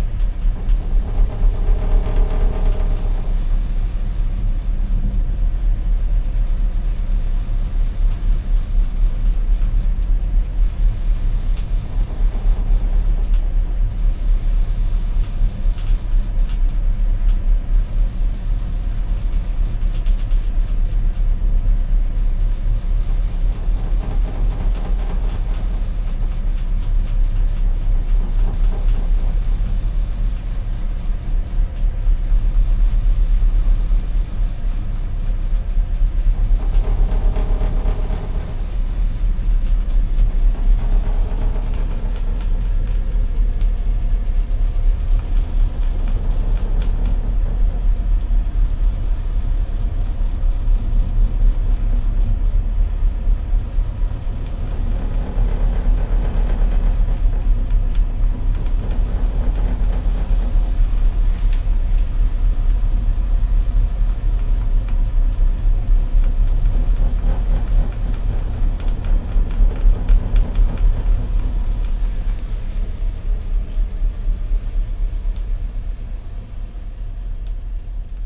Cabin 6105 aboard the Dana Sirena Ferry. travelling between Harwich (UK) & Esbjerg (DN). Engines purring. Cabin rattling.